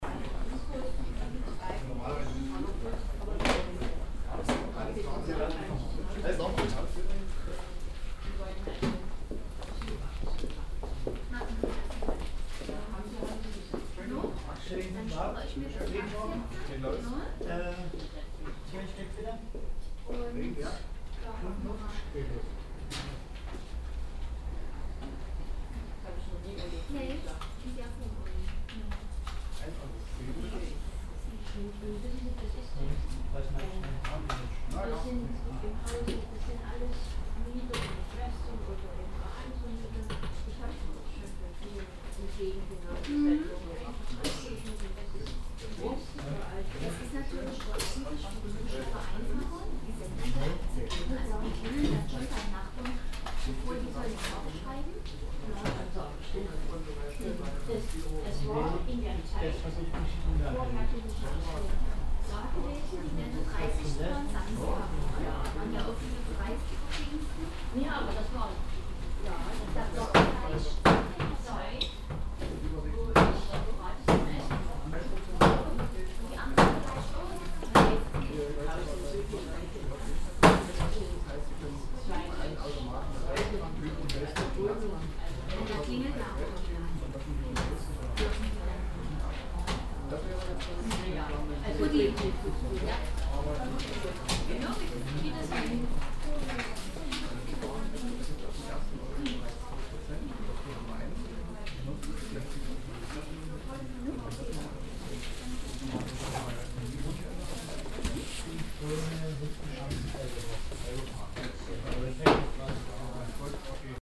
dresden, königsbrücker straße, in the post office
morning time in a local post office
soundmap d: social ambiences/ listen to the people - in & outdoor topographic field recordings